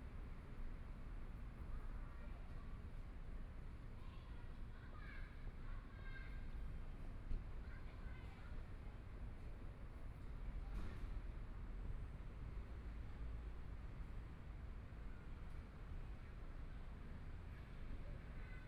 XingAn Park, Taipei - in the Park
in the Park, Traffic Sound, Motorcycle Sound, Pedestrians on the road, Birds singing, Binaural recordings, Zoom H4n+ Soundman OKM II
8 February 2014, 13:47